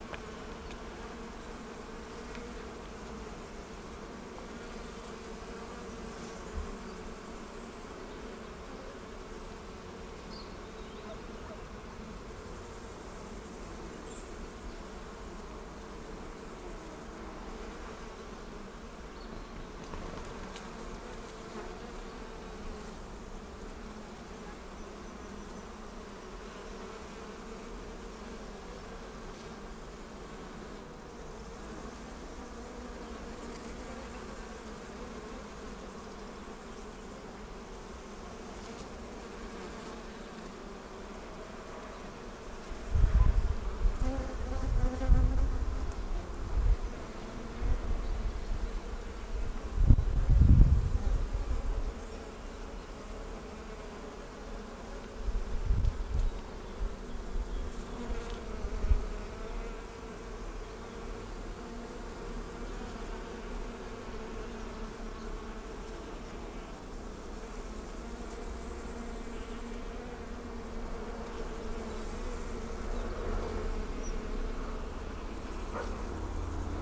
Pirovac, Makirina Hill, Kroatien - Bees on Ivy
Walking on Makirina hill. On a stone wall covered with blooming ivy a swarm of bees gathered. In the background some birds, crickets and a barking dog.